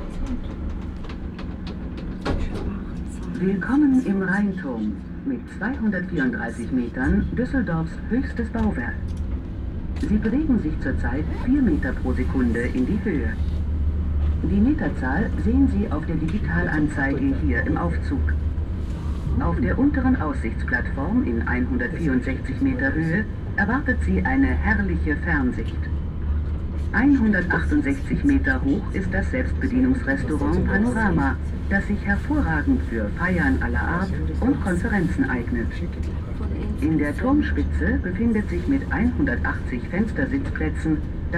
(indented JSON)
{
  "title": "Unterbilk, Düsseldorf, Deutschland - Düsseldorf, Rheinturm, entrance and elevator",
  "date": "2012-11-22 14:20:00",
  "description": "Entering the Rheintower through a ticket gate and then entering the lift and moving upwards to the visitor platform. The sound of the ticket gate followed by the sounds of visitors, the sound of the elevator door and an automatic voice inside the lift.\nThis recording is part of the intermedia sound art exhibition project - sonic states\nsoundmap nrw - sonic states, social ambiences, art places and topographic field recordings",
  "latitude": "51.22",
  "longitude": "6.76",
  "altitude": "33",
  "timezone": "Europe/Berlin"
}